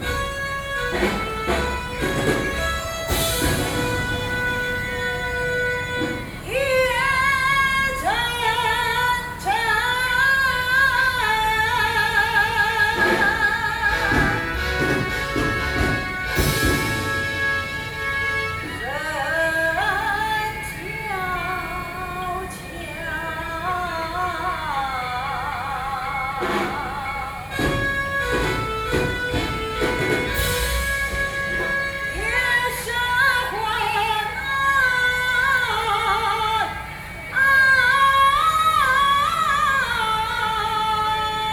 Taipei, Taiwan - Traditional theatrical performances
Wanhua District, 貴陽街二段212號, 3 December 2012, 19:37